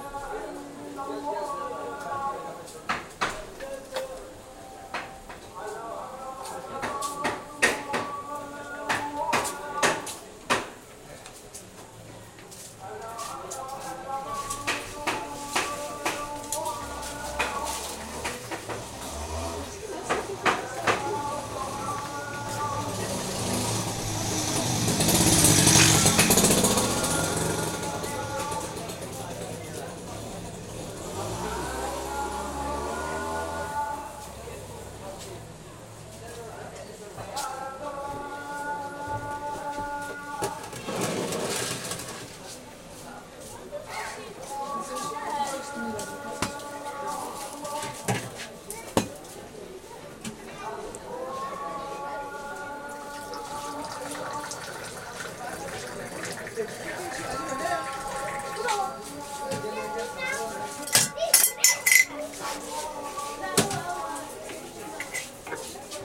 Essaouira, Derb Ibn Khaldoun, Hassans store
Africa, Morocco, Essaouira, tea